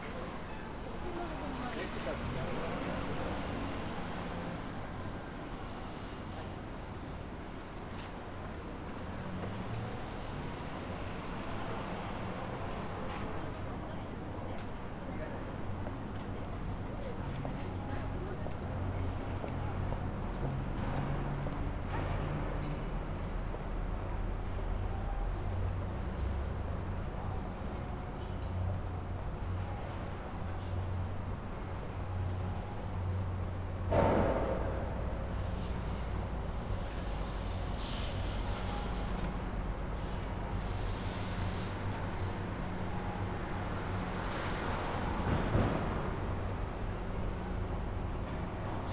although the site was active the area was rather quiet. not too much noise at all. it's an area with many small restaurants, people come here after work to relax in bars. seems nobody is bothered by the working machines.